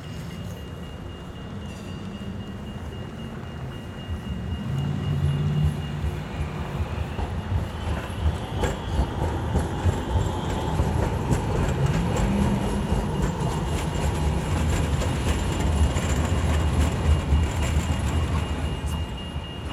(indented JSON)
{
  "title": "Kruisplein, Rotterdam, Netherlands - Kruisplein",
  "date": "2022-01-12 15:30:00",
  "description": "A busy day in the city center. Recent research indicates that this is one of the noisiest points in the city. Recorded with ZoomH8",
  "latitude": "51.92",
  "longitude": "4.47",
  "altitude": "13",
  "timezone": "Europe/Amsterdam"
}